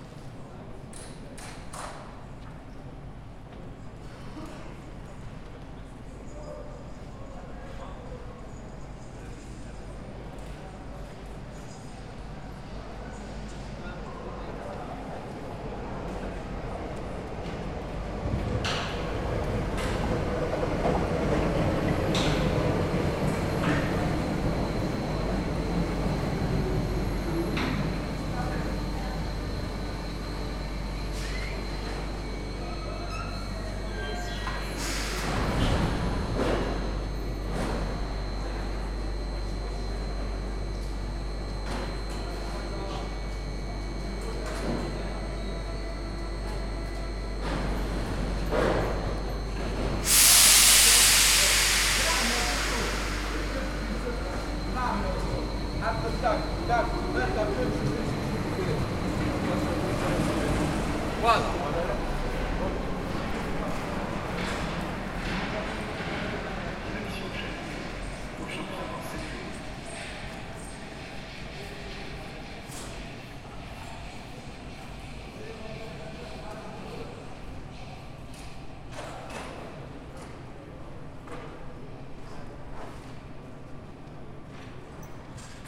Avenue Gabriel Péri, Saint-Ouen, France - Station de Metro, Garibaldi
Garibaldi metro station (internal microphones on Tascam DR-40)
25 January, 09:45